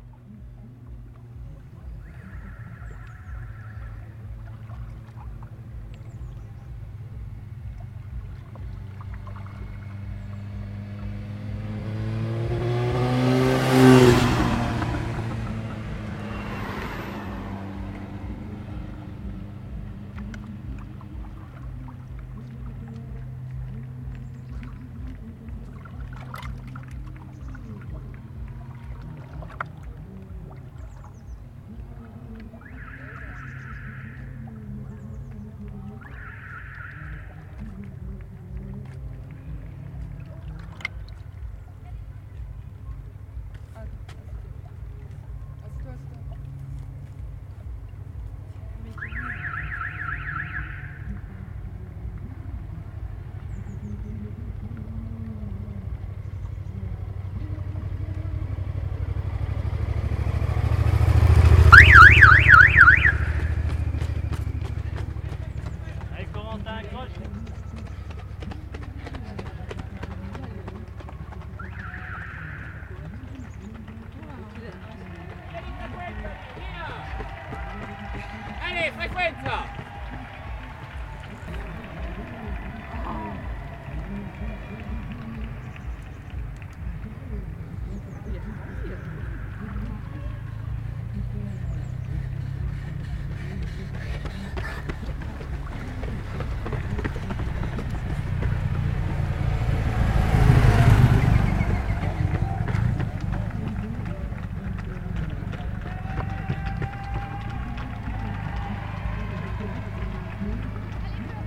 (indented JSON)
{
  "title": "Bd Jean Charcot, Tresserve, France - Arrivée course",
  "date": "2022-09-04 10:00:00",
  "description": "Près du lac à 500m de l'arrivée de la course à pied des 10km du lac organisée par l'ASA Aix-les-bains les belles foulées des premiers concurrents, certains sont plus ou moins épuisés par la distance, polyrythmie des groupes, les clapotis de l'eau se mêlent aux applaudissements du public.",
  "latitude": "45.69",
  "longitude": "5.90",
  "altitude": "503",
  "timezone": "Europe/Monaco"
}